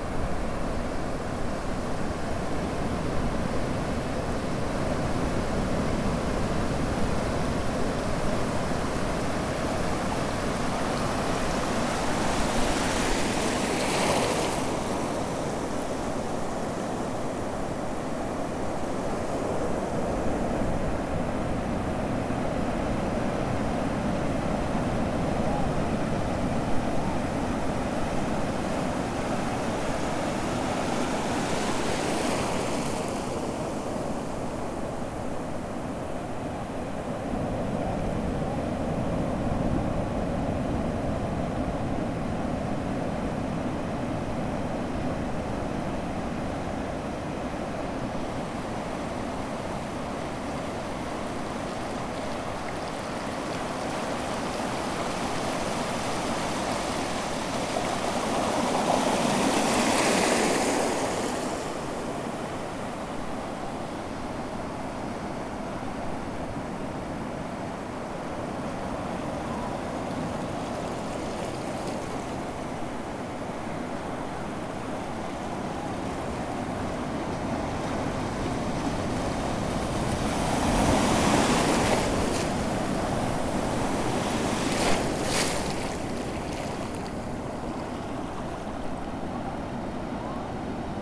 Gravação das ondas da praia da Lagoinha em Ubatuba, São Paulo. Dia nublado com maré alta.
Record the waves of Lagoinha beach in Ubatuba, São Paulo. Cloudy with high tide.
Ubatuba - SP, Brazil, August 2018